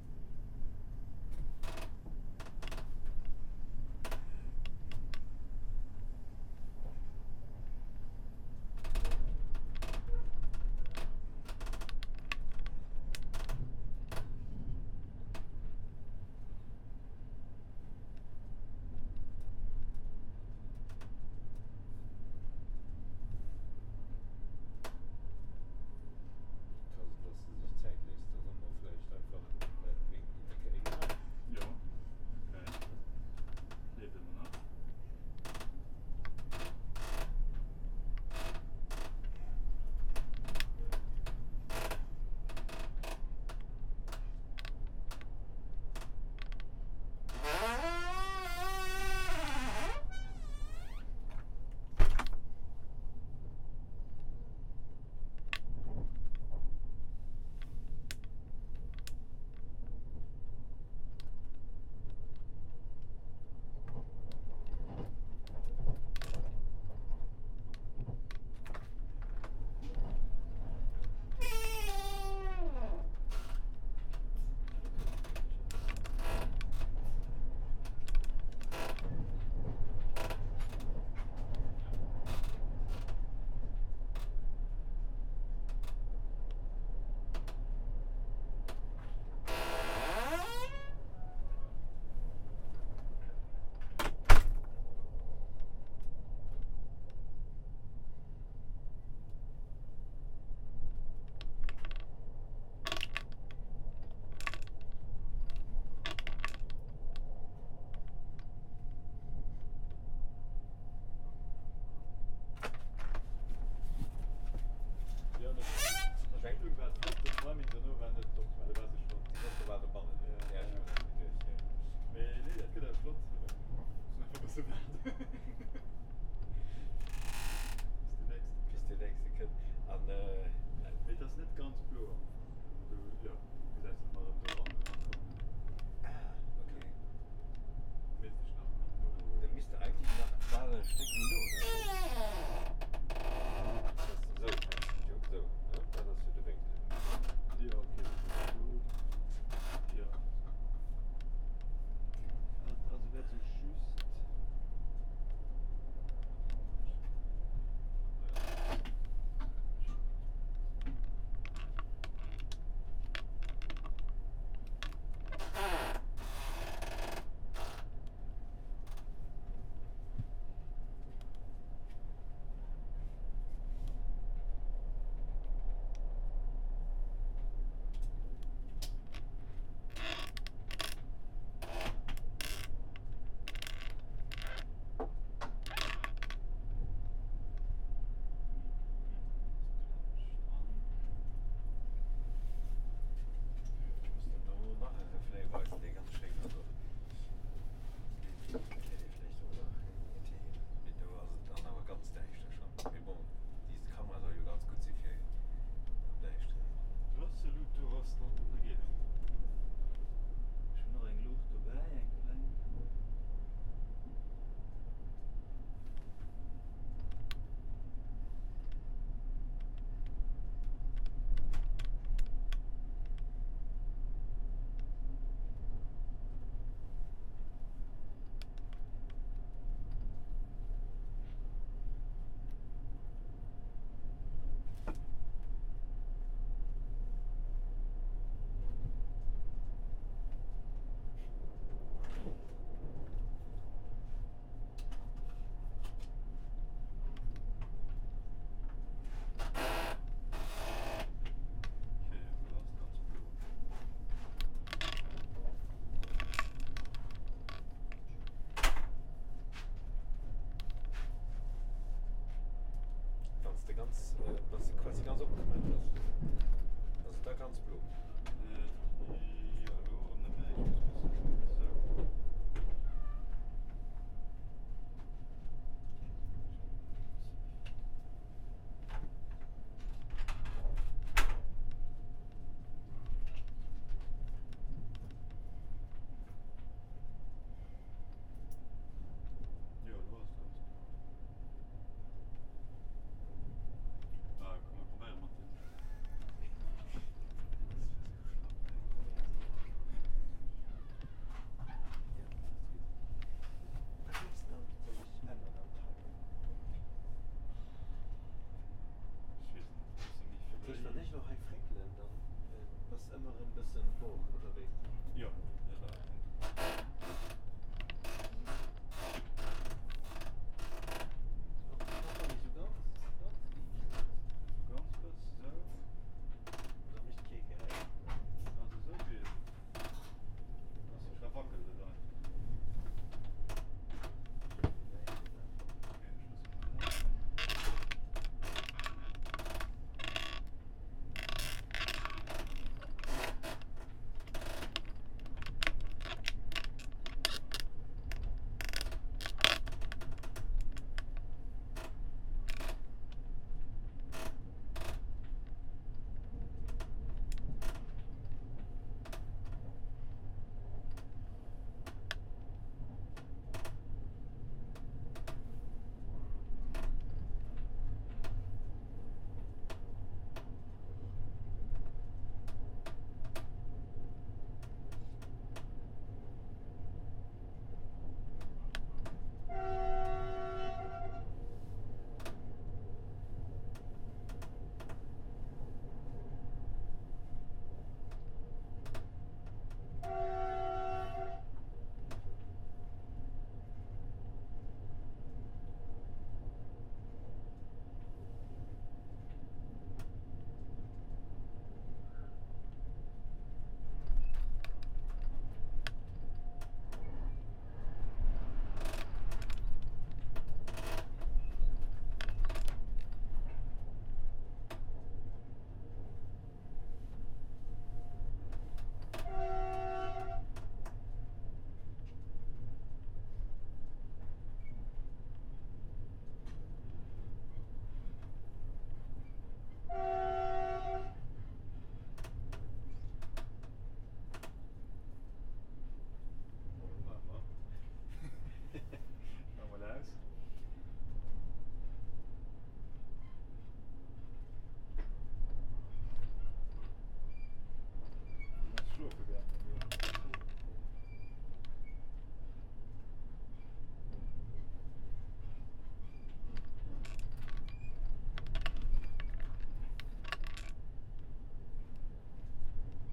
Żabikowska, Luboń, poland - working on the doors
two men working on the door in the train.
2 x dpa 6060 mics.
województwo wielkopolskie, Polska, 29 June 2022